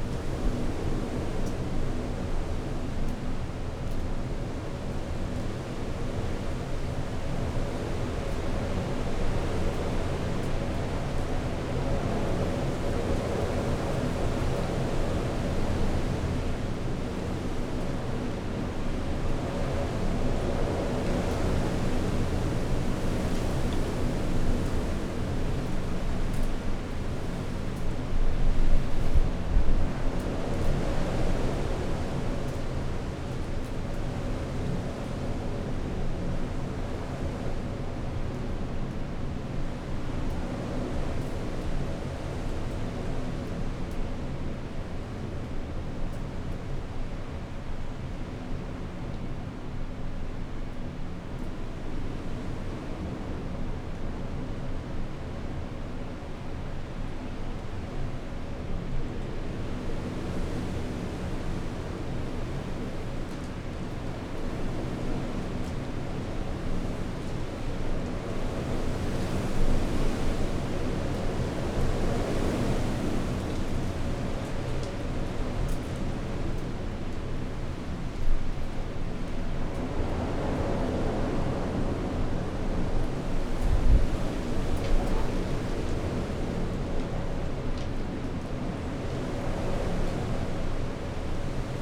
Unnamed Road, Malton, UK - inside church porch ... outside storm erik ...
inside church porch ... outside ... on the outskirts of storm erik ... open lavaliers on T bar on tripod ...
9 February 2019, 07:50